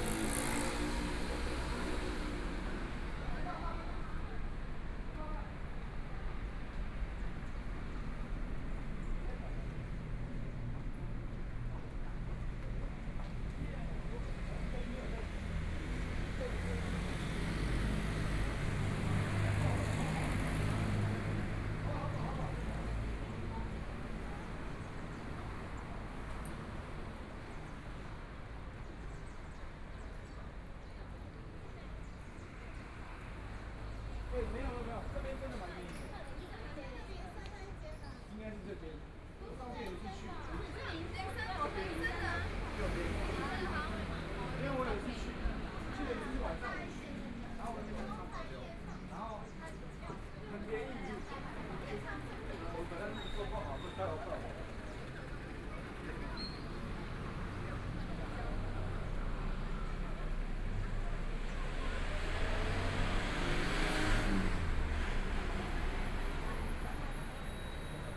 Jinzhou St., Zhongshan Dist. - In the Street
Walking through the streets, Traffic Sound, Motorcycle sound, Various shops voices, Binaural recordings, Zoom H4n + Soundman OKM II